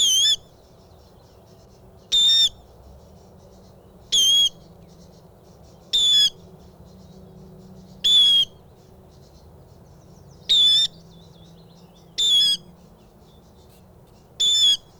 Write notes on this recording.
water rails ... parabolic ... recorded where was once a reed bed and water logged scrub ... not getting too anthropormorphic but these two birds where absolutely indignant at my presence ... probably had fledglings near by ... they are highly secretive birds ... bird calls ... song from blue tit ... sedge warbler ... willow warbler ... background noise ...